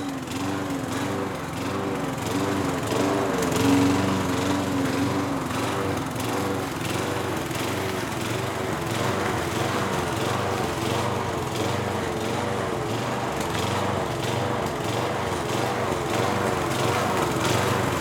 Niederrieden, Deutschland - Lawn mower
a guy shearing lawn